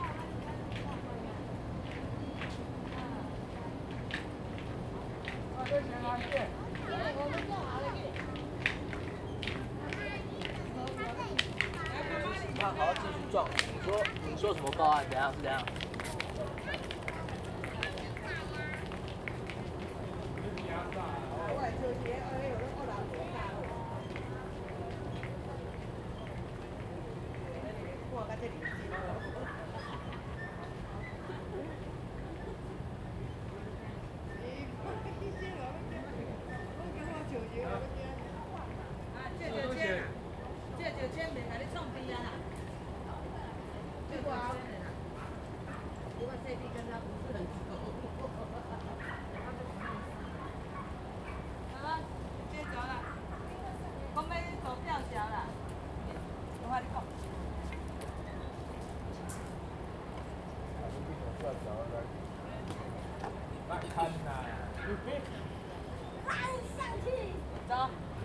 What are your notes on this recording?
Holiday and Visitor, Footsteps, Zoom H2n MS+ XY